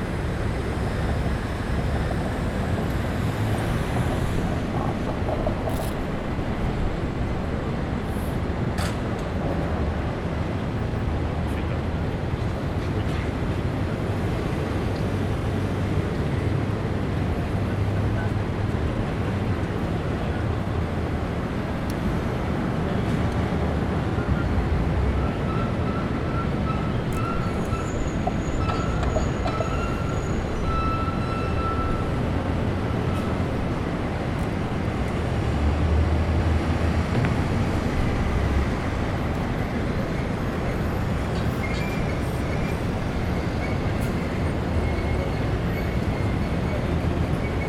Financial District, San Francisco, CA, USA - Financial
Field recording from the 6th floor garden of a financial district building.